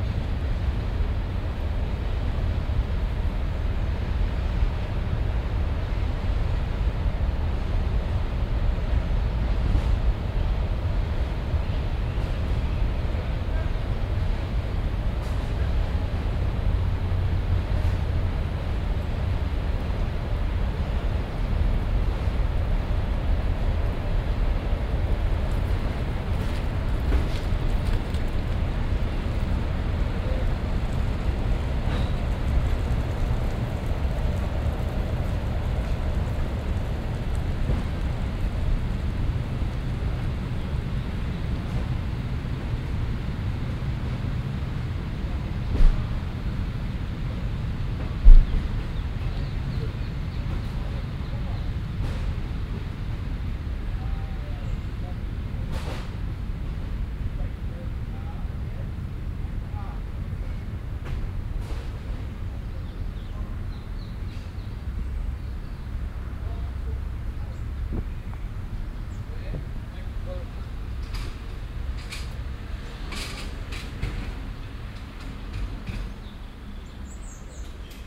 cologne, stadtgarten, weg, schranke
parkambiencen
project:
klang raum garten - fieldrecordings
7 May 2008